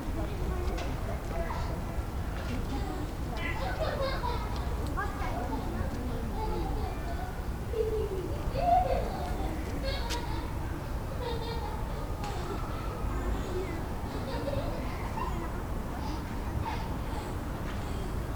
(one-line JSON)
{"title": "berlin wall of sound-heidkampgraben. j.dickens 020909", "latitude": "52.47", "longitude": "13.48", "altitude": "37", "timezone": "Europe/Berlin"}